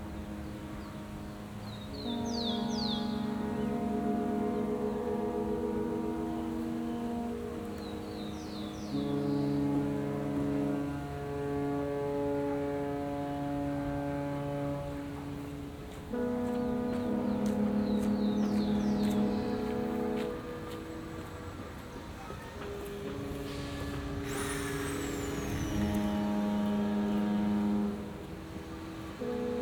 Cerro Carcel, Valparaíso - Ship horns in the harbor of Valparaiso
Horns from various boats at the same time (probably for some event, an important boat leaving or arriving) recorded from Cerro Carcel (in front of the Ex Carcel) in Valparaiso, Chile. Sounds from the city in background.
Recorded in December 2018 by a Binaural Microphone Smart Ambeo Headset (Sennheiser) on an iPhone.
Date: 181207 at 17h20
GPS: -33.045410 -71.627216